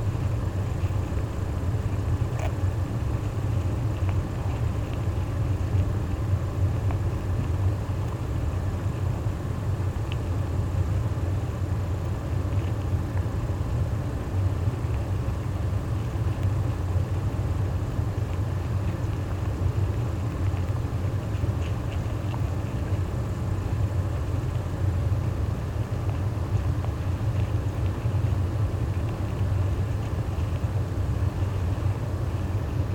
Lithuania, Nemeiksciai, the dam
another eksperimental recording of the dam: conventional microphones, hydrophone and contact mic on the ant nest - all recorded at the same time and mixed together